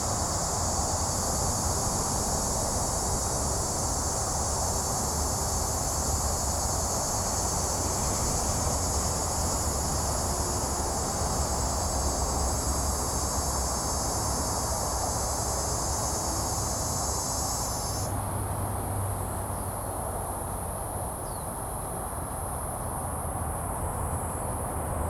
Xuefu St., Hukou Township - Next to the bamboo forest
Next to the bamboo forest, Cicada cry, Close to the highway
Zoom H2n MS+XY